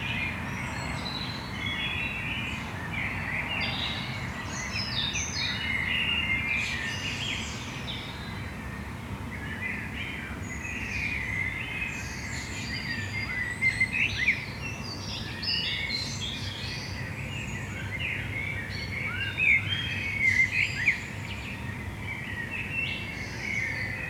Stadtwald, Essen, Deutschland - essen, amselstrasse, early morning bird scape
Frühmorgens auf einem Balkon. Die Klänge der erwachenden Vögel und im Hintergrund der Klang der naheliegenden Autobahn. Ausschnitt einer längeren Aufnahme freundlicherweise für das Projekt Stadtklang//:: Hörorte zur Verfügung gestellt von Hendrik K.G. Sigl
On a balcony of a private house in the early morning. The sounds oof the awakening birds and the traffic from the nearby highway.
Projekt - Stadtklang//: Hörorte - topographic field recordings and social ambiences